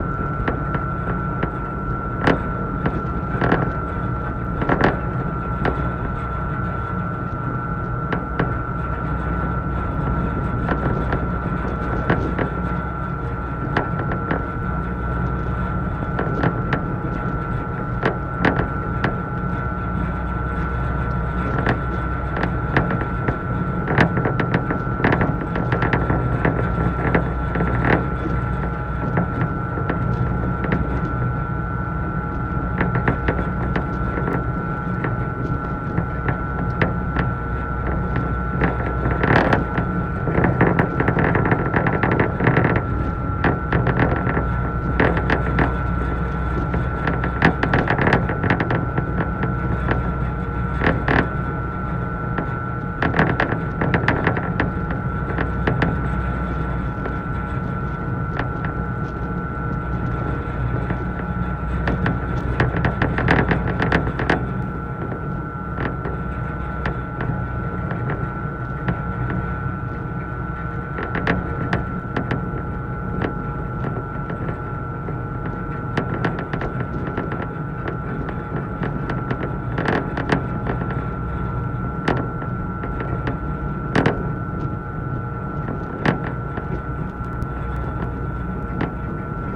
{
  "title": "Brooklyn, NY, USA - Aboard the NYC Ferry",
  "date": "2019-07-12 14:48:00",
  "description": "Aboard the NYC Ferry, with a contact mic attached to a metal cable.",
  "latitude": "40.60",
  "longitude": "-74.04",
  "timezone": "America/New_York"
}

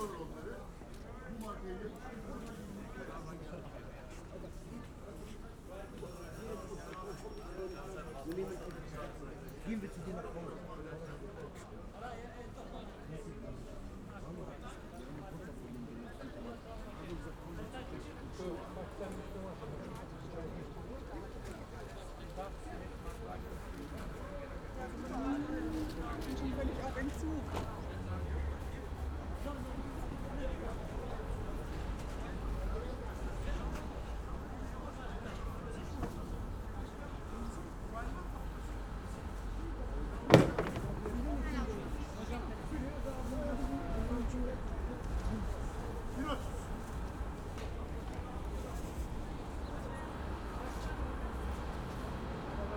{
  "title": "Maybachufer, Berlin, Deutschland - market walk",
  "date": "2020-03-24 14:50:00",
  "description": "Soundscapes in the pandemic: walk over Maybachufer market, sunny afternoon in early spring, normally (and as you can hear from the many recordings around) this would be a crowded and lively place. not so now, almost depressed.\n(Sony PCM D50, Primo EM172)",
  "latitude": "52.50",
  "longitude": "13.42",
  "altitude": "41",
  "timezone": "Europe/Berlin"
}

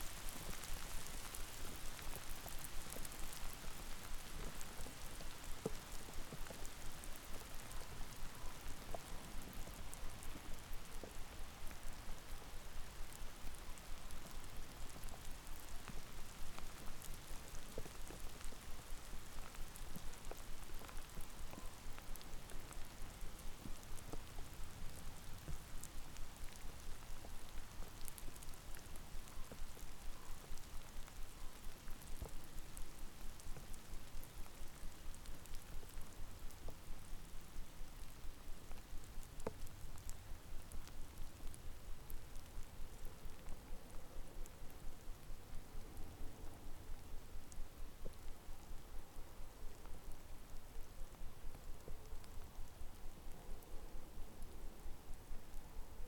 {
  "title": "Šlavantas lake, Šlavantai, Lithuania - Hail shower on a frozen lake",
  "date": "2021-03-19 16:00:00",
  "description": "Short hail shower on top of the frozen Šlavantas lake. Recorded with ZOOM H5.",
  "latitude": "54.16",
  "longitude": "23.65",
  "altitude": "123",
  "timezone": "Europe/Vilnius"
}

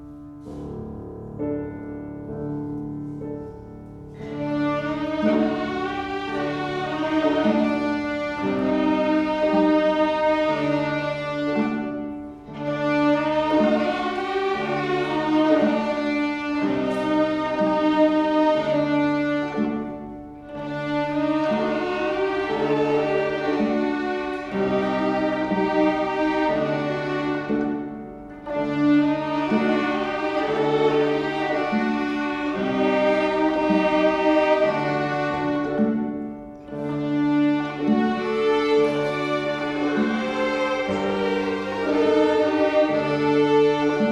{
  "title": "Weinmeisterstr., Freie Waldorfschule - violin orchestra",
  "date": "2011-04-07 19:25:00",
  "description": "pupils from age 6 to 16 playing",
  "latitude": "52.53",
  "longitude": "13.41",
  "altitude": "36",
  "timezone": "Europe/Berlin"
}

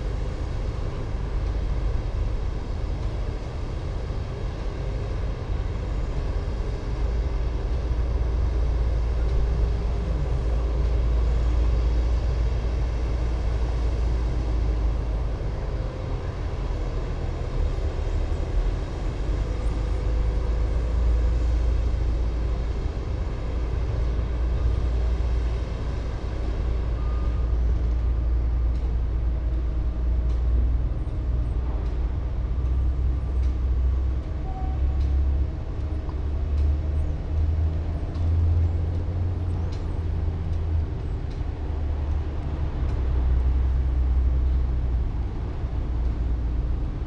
{
  "title": "berlin, sonnenallee: aufgegebenes fimengelände - A100 - bauabschnitt 16 / federal motorway 100 - construction section 16: earthworks",
  "date": "2016-04-11 15:47:00",
  "description": "different excavators, bulldozers and trucks during earthwork operations\napril 11, 2016",
  "latitude": "52.47",
  "longitude": "13.46",
  "altitude": "36",
  "timezone": "Europe/Berlin"
}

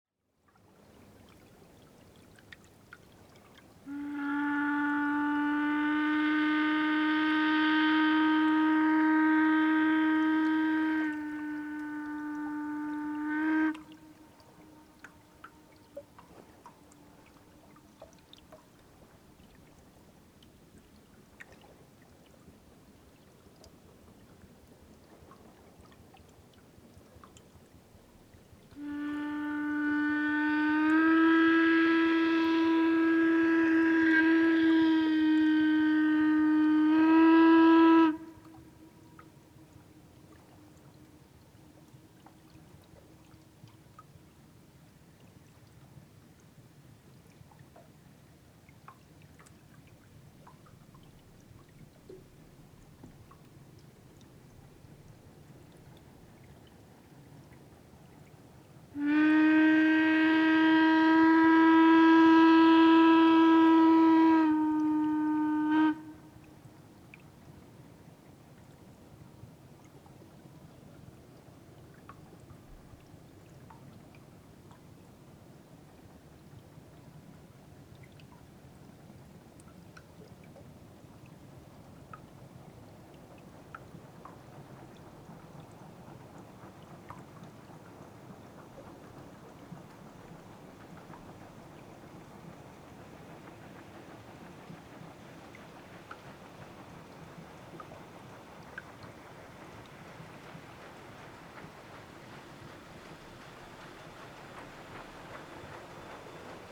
{
  "title": "Meggenhorn, Schweiz - Raddampfer",
  "date": "2001-06-12 09:40:00",
  "description": "Anlegen eines Raddampfers am Steg.\nJuni 2001\nTascam DA-P1 / 1. Kanal: TLM 103, 2.",
  "latitude": "47.03",
  "longitude": "8.35",
  "altitude": "437",
  "timezone": "Europe/Zurich"
}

